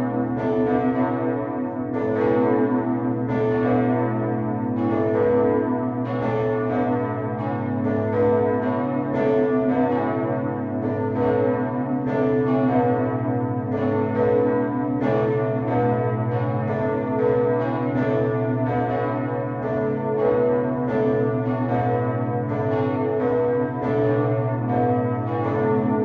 Bruges, Belgique - Brugge bells
The Brugge bells in the Sint-Salvatorskathedraal. Recorded inside the tower with Tim Martens and Thierry Pauwels.
Brugge, Belgium, 26 October